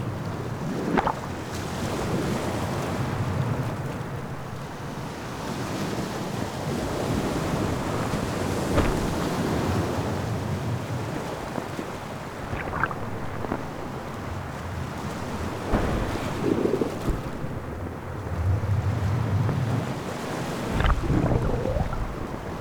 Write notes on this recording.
This is an experiment using 2 x Beyer Lavaliers, 1 Hydrophone and 1 contact mic on a half submerged rock. Recorded on a MixPre 3.